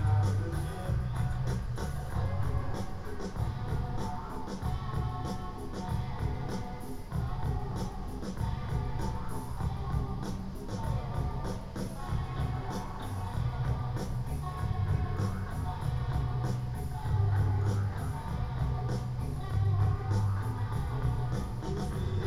"Autumn Playlist on terrace in the time of COVID19": Soundscape
Chapter CXLII of Ascolto il tuo cuore, città. I listen to your heart, city
Saturday November 14th, 2020. Fixed position on an internal terrace at San Salvario district: from the building South, last floor, amplified music resonates at high volume. Turin, eight day of new restrictive disposition due to the epidemic of COVID19.
Start at 1:33 p.m. end at 2:18 p.m. duration of recording 45'03''
Piemonte, Italia, 14 November 2020, 13:33